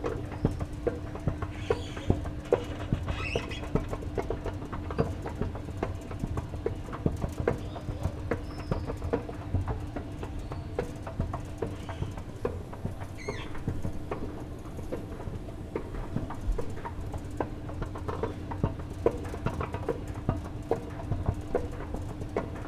Perugia, Italia - Escalators outside rocca paolina
25 May 2014, ~18:00